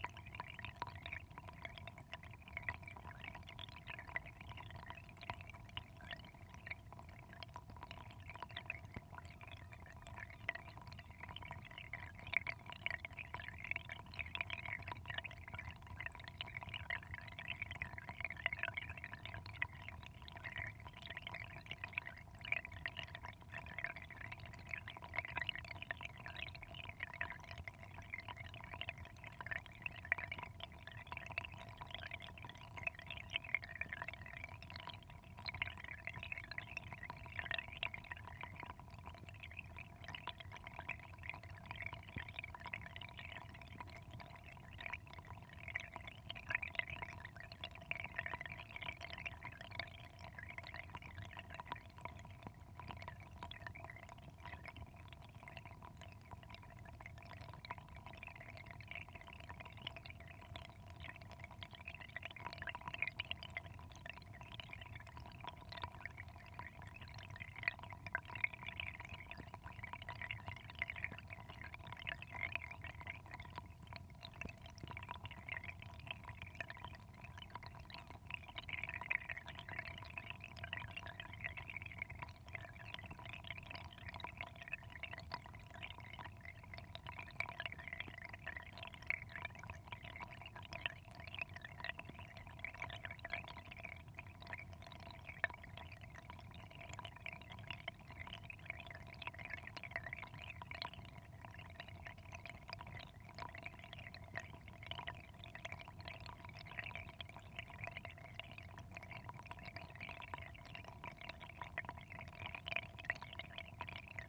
Houtrustweg, Den Haag - hydrophone rec of a little stream next to a drain
Mic/Recorder: Aquarian H2A / Fostex FR-2LE
The Hague, The Netherlands, 1 May 2009